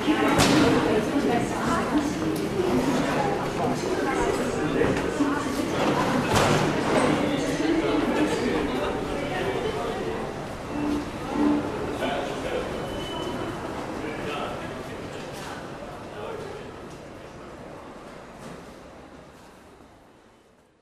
Paris, Metro Grands Boulevards, towards quotidianity
Three ears in the entrance of the subway station. Things you certainly don hear when you take the metro here everyday.